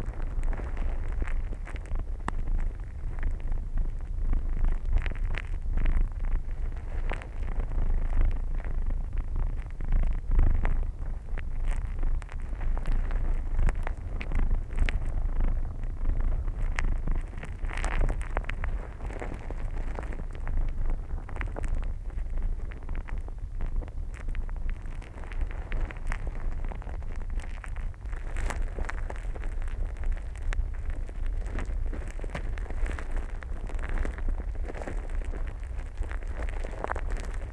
La Riche, France - The Loire river
A strange recording made into the Loire river. A contact microphone is buried into the river sand. It's the astonishing sound of small animals digging into the ground.